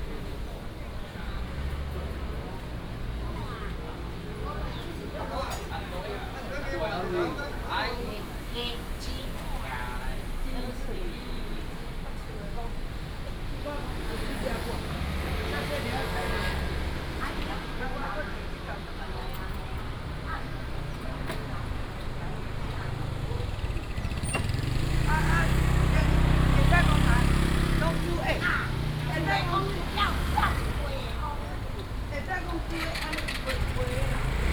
南興巷28弄, Nantun Dist., Taichung City - vendors peddling
walking in the Traditional Markets, traffic sound, vendors peddling, Binaural recordings, Sony PCM D100+ Soundman OKM II
Taichung City, Taiwan, 24 September 2017